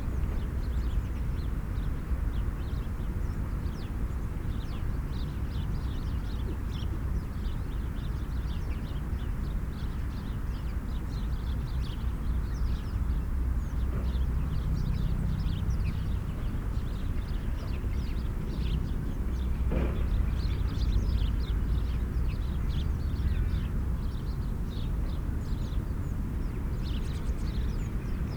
berlin: mergenthalerring - A100 - bauabschnitt 16 / federal motorway 100 - construction section 16: destroyed allotment
sparrows, crows, local trains, steps in the frozen snow and the distant drone of traffic
the motorway will pass through this point
the federal motorway 100 connects now the districts berlin mitte, charlottenburg-wilmersdorf, tempelhof-schöneberg and neukölln. the new section 16 shall link interchange neukölln with treptow and later with friedrichshain (section 17). the widening began in 2013 (originally planned for 2011) and will be finished in 2017.
sonic exploration of areas affected by the planned federal motorway a100, berlin.
january 2014